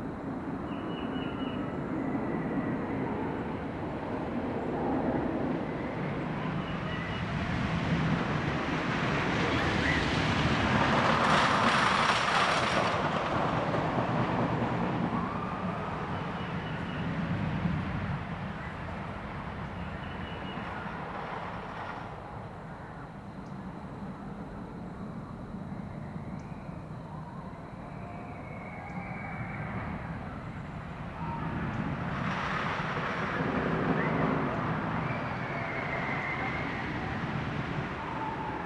DeClue Trail, Pacific, Missouri, USA - Screamin Eagle DeClue Trail
As you descend this trail in the woods you would think something sinister is occurring off in the distance. Rest assured it is just sounds of delight from Six Flags Amusement Park and most prominently the Screamin’ Eagle roller coaster. When it opened in 1976 for America's Bicentennial it was noted by the Guinness Book of World Records to be the largest and fastest wooden roller coaster. The DeClue trail is in Greensfelder County Park part of the Henry Shaw Ozark Corridor.
Missouri, United States